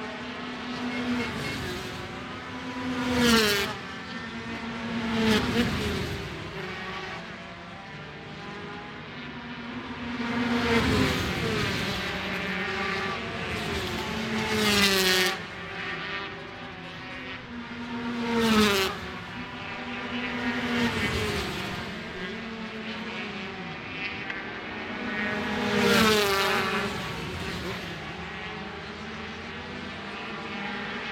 British Superbikes 2005 ... 125 free practice two ... one point stereo mic to minidisk ...